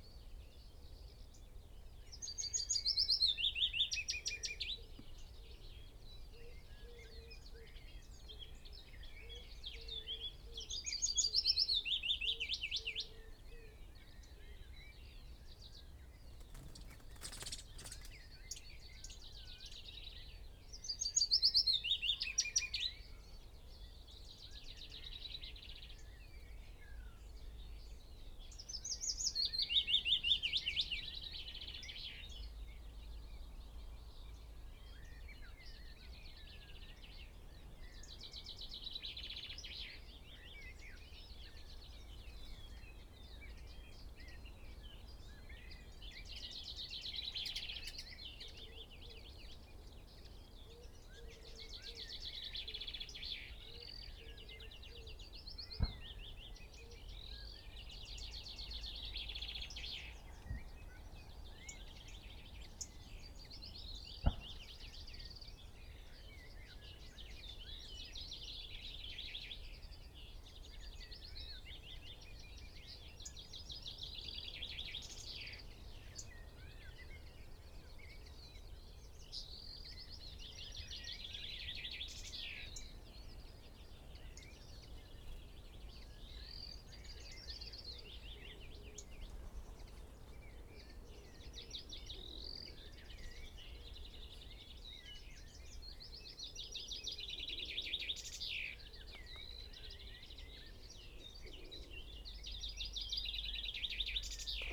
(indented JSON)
{
  "title": "Green Ln, Malton, UK - willow warbler song ...",
  "date": "2021-05-11 06:39:00",
  "description": "willow warbler song ... dpa 4060s clipped to a bag wedged in the crook of a tree to Zoom H5 ... bird calls ... song from ... pheasant ... yellowhammer ... wood pigeon ... chaffinch ... skylark ... magpie ... wren ... linnet ... blackbird ... blackcap ... lesser whitethroat ... unattended extended unedited recording ... background noise ...",
  "latitude": "54.12",
  "longitude": "-0.57",
  "altitude": "96",
  "timezone": "Europe/London"
}